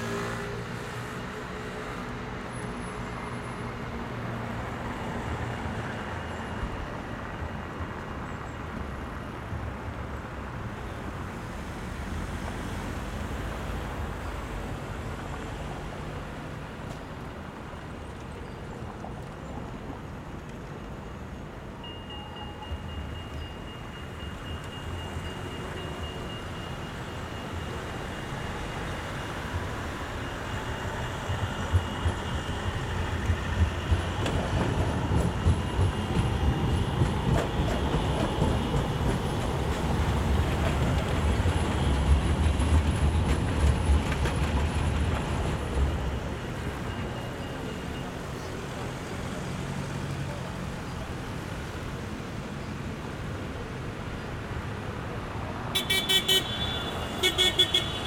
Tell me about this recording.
A busy day in the city center. Recent research indicates that this is one of the noisiest points in the city. Recorded with ZoomH8